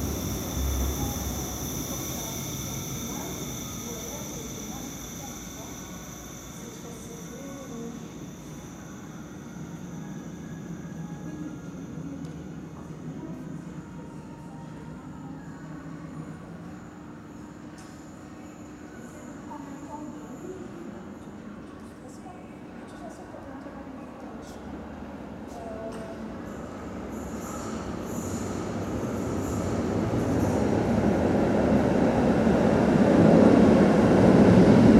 Tram 51 (old model), 3 & 4 (new models) at the station, voice announcements.
Tech Note : Olympus LS5 internal microphones.
Saint-Gilles, Belgium